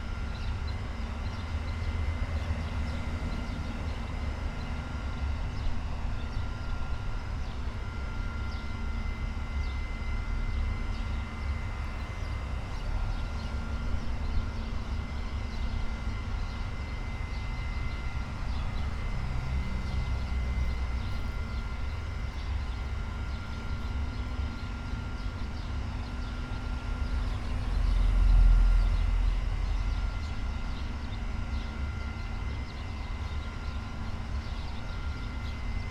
wastewater treatment plant, Marsaskala, Malta - sounds of purification devices
Wied il-Għajn, Malta, 7 April 2017